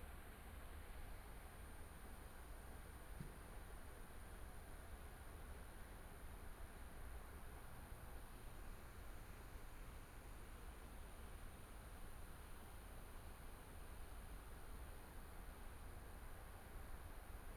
中山區大佳里, Taipei City - Insects
Bushes near the airport at night, Insects
Binaural recordings, Please turn up the volume a little
Zoom H4n+ Soundman OKM II